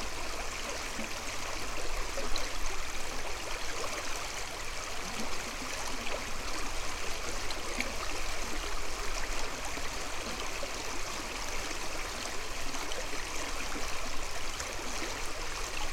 Pačkėnai, Lithuania, the river
river Viesa. fallen trees form some kind of dam.
Utenos apskritis, Lietuva, 18 February 2020